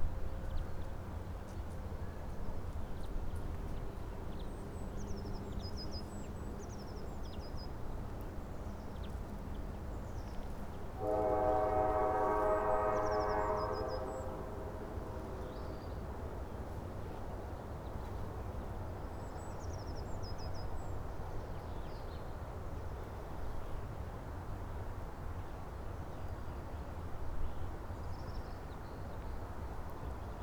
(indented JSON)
{
  "title": "Poznań outskirts, Morasko, around campus construction site - freight train",
  "date": "2013-04-21 14:31:00",
  "description": "a sturdy freight train slithering about one kilometer away twined in bells of warning poles, echoed from slender apartment buildings located even further away. birds chirping here and there, a fly taking a breather on the microphone. recording rig a bit too noisy for recording of such quiet space and to pick up of the tumbling train in the distance.",
  "latitude": "52.47",
  "longitude": "16.92",
  "altitude": "94",
  "timezone": "Europe/Warsaw"
}